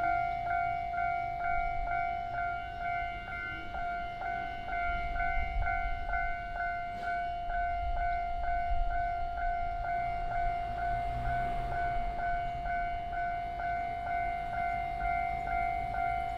Beside the railway crossing, A train traveling through, Very hot weather, Traffic Sound